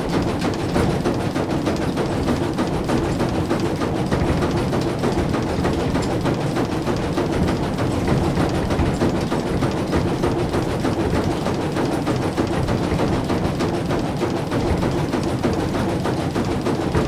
Inside the mill on the roof floor. The sound of a mechanic that is called Plansichter. Two wooden boxes that filter the floor and constantly swing on bamboo sticks.
Enscherange, Rackesmillen, Plansichter
Im Dachgeschoß der Mühle. Das Geräusch einer Konstruktion die Plansichter genannt wird. Zwei hölzerne Boxen die dazu dienen das Mehl zu filtern und mittels Unwuchtantrieb in ständiger Schwingung gehalten, an Bambusstangen befestigt sind.
Dans le moulin, à l’étage sous le toit. Le bruit du mécanisme intitulé planchister. Deux caisses en bois qui filtrent la farine en se balançant régulièrement sur des bâtons de bambou.
23 September, 21:04